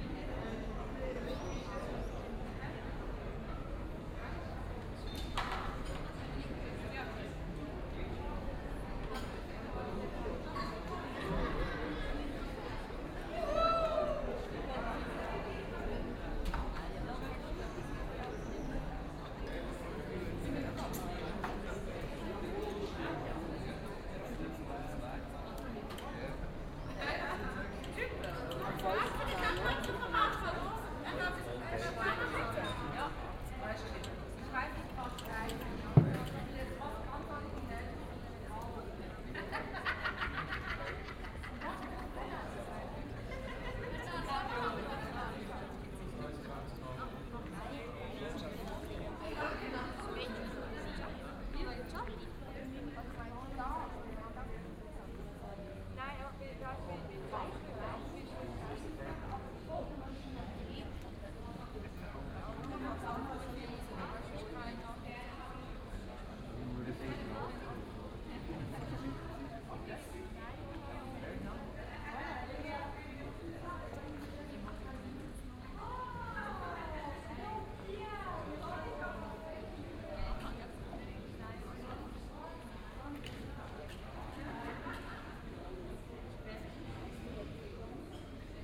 Aarau, Kirchplatz, Abendstimmung, Schweiz - Kirchplatz abends
Still the same evening stroll, place in front of the church, the church bells toll a quarter to nine. In front of the 'Garage' people are chatting.
28 June 2016, 20:40